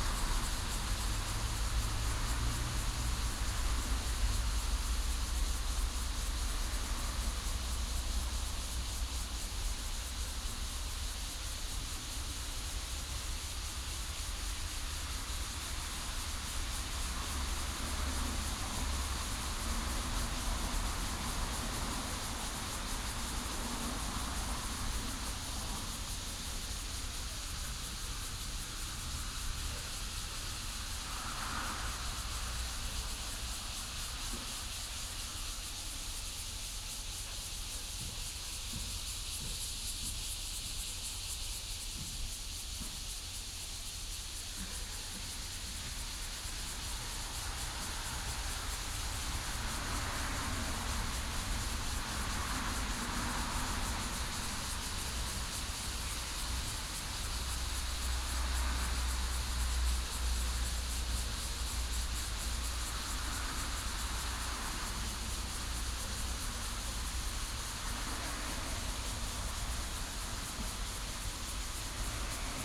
Yuemei Zlementary School, Guanshan Township - Cicadas sound
Cicadas sound, Birdsong, Traffic Sound
Guanshan Township, 月眉